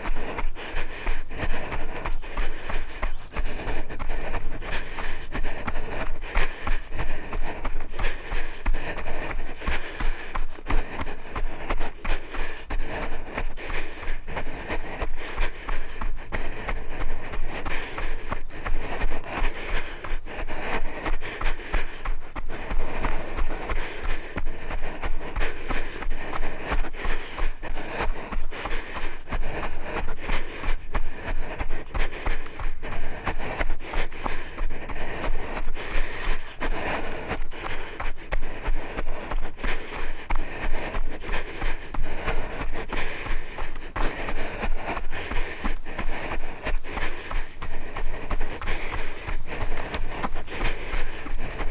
The Running Girl - Tiddesley Wood, Pershore, Worcestershire, UK
Rhianwen is carrying the recorder in one hand and in the other a length of plastic tube with one Beyer lavalier on the end just above her feet. The other lavalier is taped under the peak of her cap. She ran over 7k to produce this recording.
Recorded on a Sound devices Mix Pre 3.